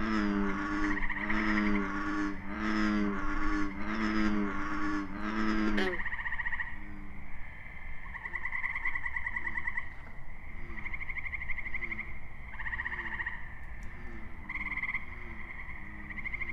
gray tree frogs, bullfrogs, green frogs and spring peepers recorded in a small pond located on the fringe of Belleplain State Forest. Fostex fr=2le with AT3032 mics